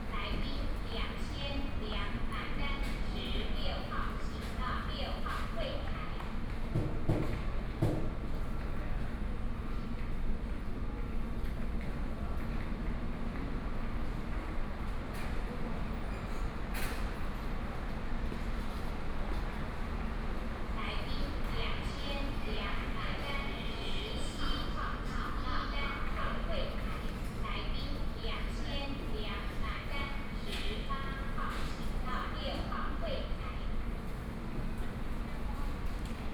大同醫院, Kaohsiung City - In the hospital lobby
In the hospital lobby